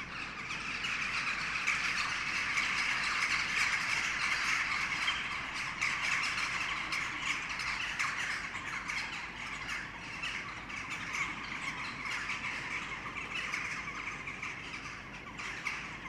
Kortenbos, Den Haag, Nederland - Jackdaws gathering
This is the daily ritual of Jackdaws gathering before going to sleep.